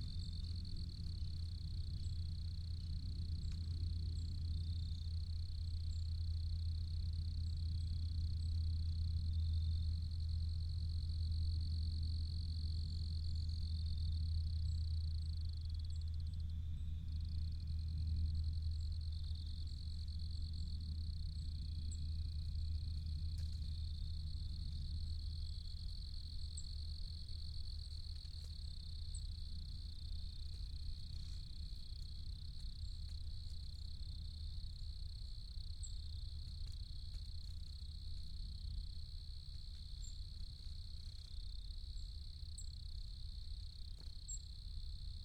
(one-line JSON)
{"title": "Downe, NJ, USA - forest intrusions", "date": "2016-11-01 18:00:00", "description": "A swamp setting provides a mixture of forest sounds (a bird of some sort seems to peck at my setup halfway through) and manmade intrusions (aircraft and a siren). Location: Bear Swamp", "latitude": "39.31", "longitude": "-75.14", "altitude": "11", "timezone": "America/New_York"}